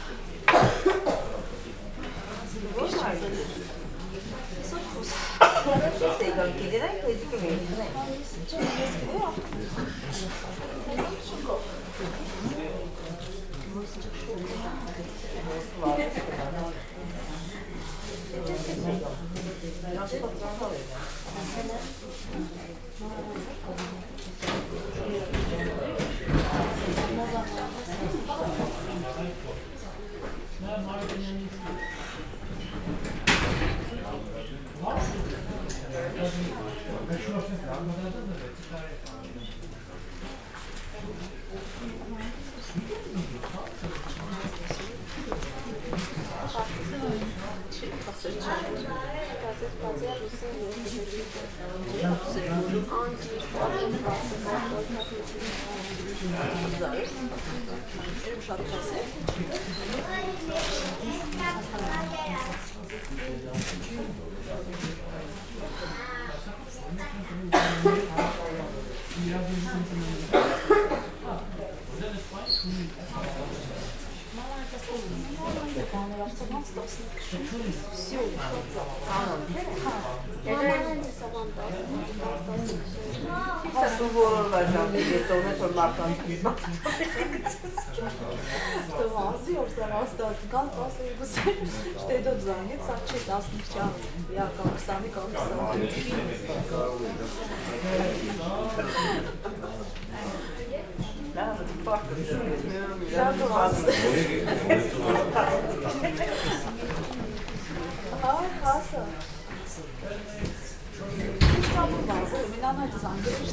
Norshen, Arménie - The train in Armenia, between Gyumri to Norshen
A trip made in train between Gyumri station to Erevan station. The train in Armenia is old and absolutely not reliable ; the marshrutni minibuses are faster and better. It was an interesting manner to travel to see how it works into an Armenian train. It's slow and uncomfortable. People are incoming, and after 8 minutes, the travel begins. Whole transport to Erevan need 3 hours. This recording stops in Norshen.